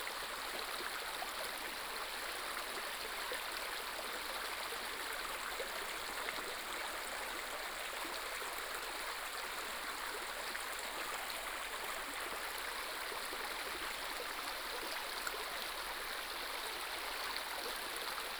種瓜坑溪, 成功里, Nantou County - Upstream
In a small stream, Upstream
28 April 2016, 10:39